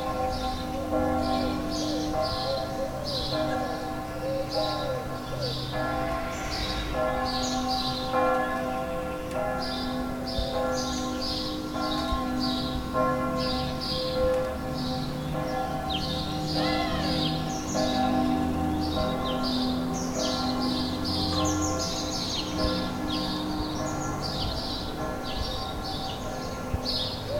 Nova Gorica, Slovenija, Ledinski Park - Krščanska Budnica